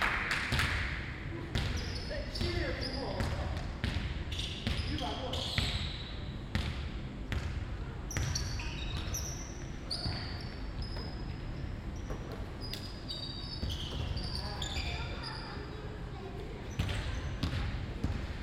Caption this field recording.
Some folks playing basketball at the Kiel University sports hall, squeaking shoes, bouncing balls, a few cheers, talking of some viewers with children, constant noise from the ventilation system. Binaural recording, Zoom F4 recorder, Soundman OKM II Klassik microphone